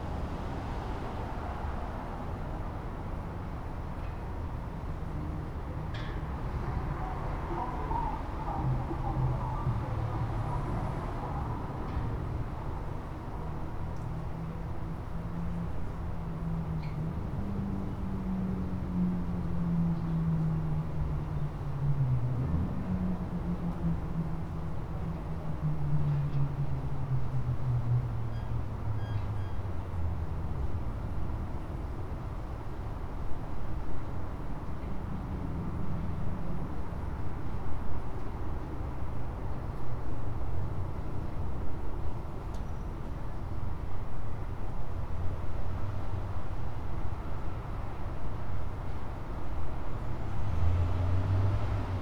Spring St SE, Smyrna, GA, USA - Recording In A Gazebo
A recording of Smyrna Market Village as heard from under a gazebo. There are lots of traffic sounds around this area, but you can also hear some sounds coming from nearby shops.
Cobb County, Georgia, United States of America, 21 February 2020